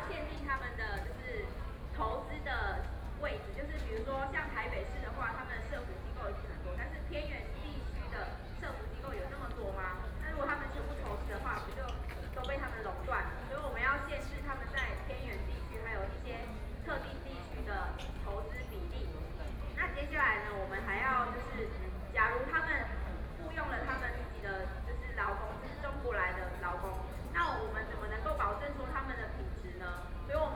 Qingdao E. Rd., Taipei City - protest
Walking through the site in protest, People and students occupied the Legislature Yuan